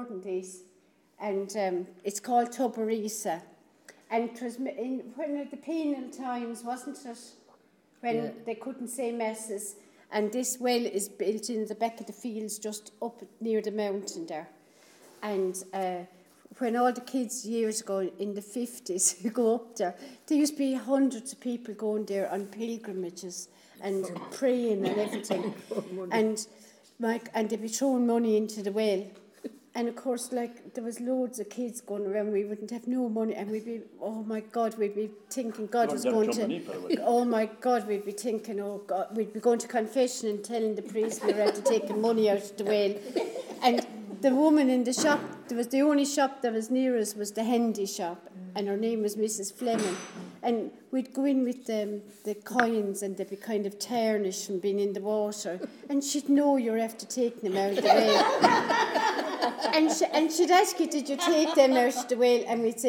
Tobar Íosa, Cahir Abbey, Co. Tipperary, Ireland - Mary Tobar Íosa
Mary from Cahir recalls her memories of the holy well Tobar Íosa near Cahir Abbey. Recording as part of the Sounding Lines Visual Art Project by Claire Halpin and Maree Hensey which intends to isolate and record unusual and everyday sounds of the River Suir in a visual way. Communities will experience a heightened awareness and reverence for the river as a unique historical, cultural and ecological natural resource. The artists will develop an interactive sound map of the River which will become a living document, bringing the visitor to unexpected yet familiar places.
21 March, 12:00